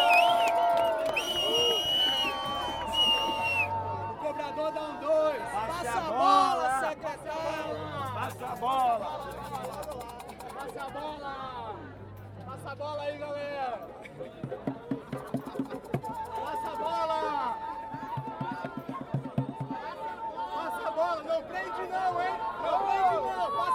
Barra, Salvador - Bahia, Brazil - Marijuana March 3
A peaceful legalise marijuana march in Salvador, Brazil.
1 June 2014, ~17:00